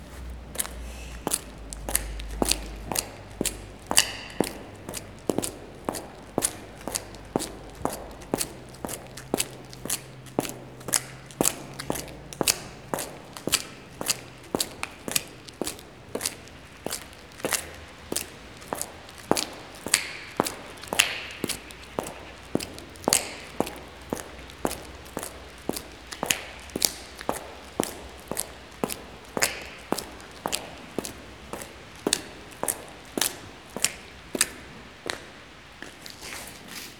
Olsztyn, Polska - Heels in the tunnel